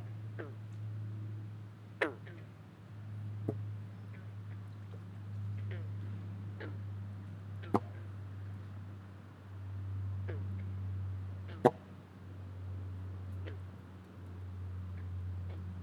Taylor Creek Park, East York, ON, Canada - Sax with frogs
Went on a late-night walk up to the local creek with the intention of trying to play quiet saxophone sounds along with bullfrogs in a pond beside the recreational trail. Fortunately, I discovered a closer one than my intended destination which suited my purpose equally well. Since it was fairly near a main road bridge that spans the valley there is more traffic noise than I would've liked, but probably not much worse than my original site.
11 July, 22:00